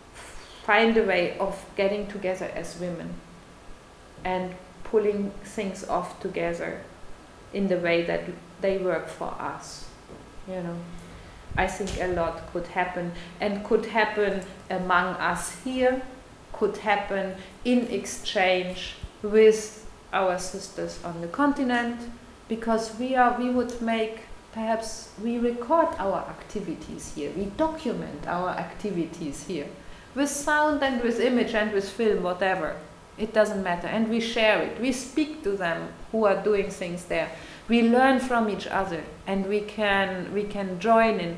VHS, Hamm, Germany - Something is starting here...
these recordings were made during a workshop at the “Empowerment-Day” for Yes-Afrika e.V. on 5 July in Hamm. The forthcoming Yes-Afrika Women’s Forum and its celebratory playlist are outcomes of the women’s conversations you are listening to here....
Celebratory Playlist: